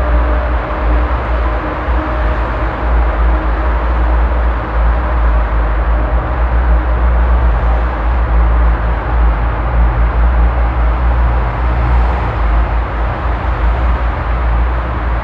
Schlossufer, Düsseldorf, Deutschland - Düsseldorf, Rheinufertunnel
Inside the Rheinufertunnel, an underearth traffic tunnel. The sound of the passing by traffic reverbing in the tunnel tube.
This recording is part of the exhibition project - sonic states
soundmap nrw - topographic field recordings, social ambiences and art placess
Düsseldorf, Germany, 19 November 2012, 13:45